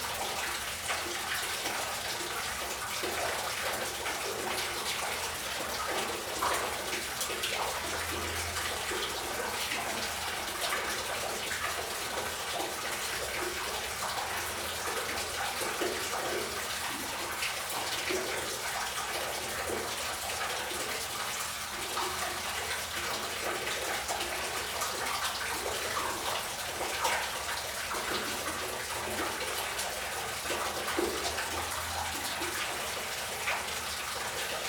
Hutnicza, Siemianowice Śląskie, Polen - sewer, water flow
former area of Huta Laura (Laurahütte), a huge steel and mining industry complex, which existed here for over 150y. Water flowing in sewer, in front of one of the remaining abandoned buildings.
(Sony PCM D50, DPA4060)